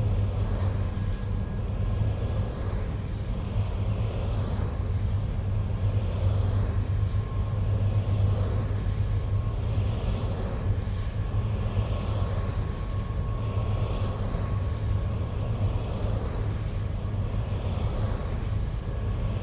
Wind farm: a rotating humming generator in the green environment, cycles of birds, weather, distance; audio stream, Bernau bei Berlin, Germany - Pure rotating air
Sounds of a wind generator - one in a farm of around 25 towers - in the flat Brandenburg countryside north of Berlin. A surprising amount of wildlife seems to be able to co-exist with the humming physical presence of these huge towers, especially a good variety of small birds whose habitat is open fields and patchy woodland, such as sky and wood larks. There are many deer. An audio stream was set up for 3 days, with mics hidden in a low bush near a hunting hide at the edge of the trees, to listen to this combination of green tech and nature. It is an 'anthropophone' (term courtesy Udo Noll) - to hear places where problematic interactions between the human and the natural are audible. Given the ever increasing demands for clean energy this rotating humming mix is likely to be the dominant sonic future in rural areas.
The generators follow the rise and fall of wind speeds and the changes of direction. Sometimes they are becalmed.
Brandenburg, Deutschland